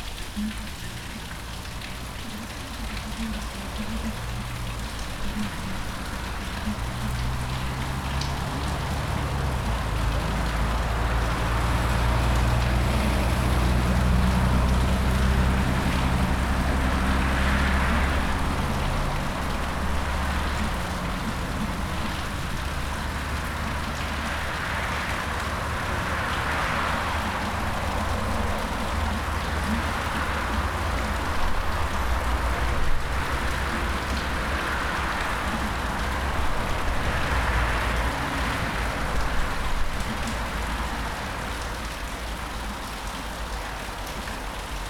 Bratislava-Old Town, Slowakei - prazska 01
Bratislava, Slovakia, 2 April 2016